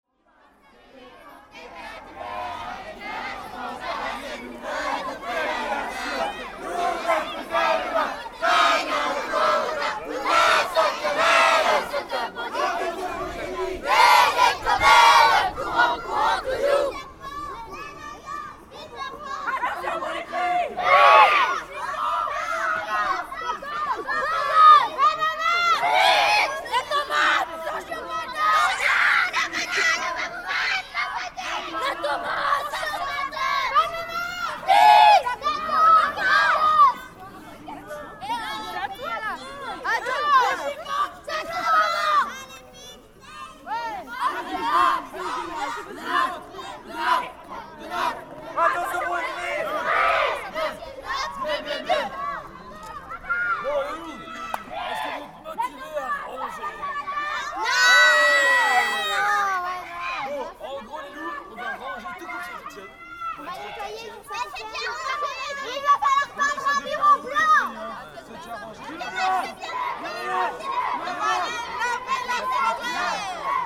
Court-St.-Étienne, Belgique - Scouting
Scouts will begin a citizen action : they will clean the village from the garbages in the woods. Before activity, they scream the rallying songs.
Court-St.-Étienne, Belgium, April 2016